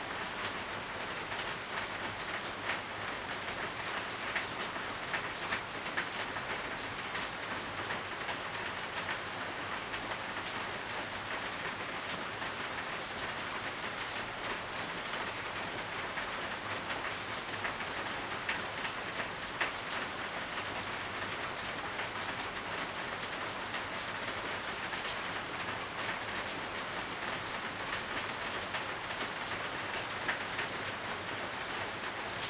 青蛙ㄚ 婆的家, Puli Township - Rainy Day
Rainy Day, Early morning, Frogs sound, at the Hostel, Sound of insects
Zoom H2n MS+XY
Nantou County, Puli Township, 桃米巷11-3號